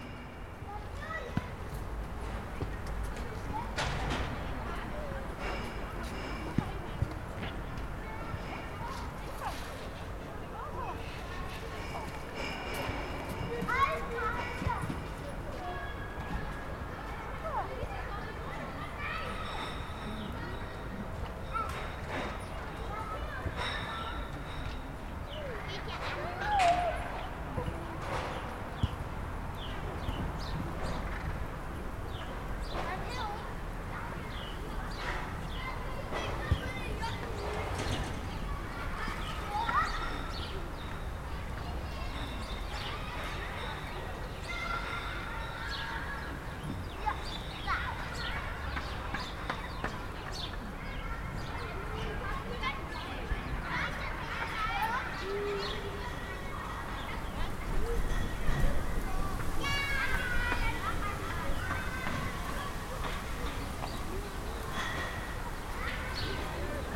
Kleine Hamburger Str., Berlin, Allemagne - Children
Children playing at a soccer field, Zoom H6, MS microphone
28 February 2019, ~15:00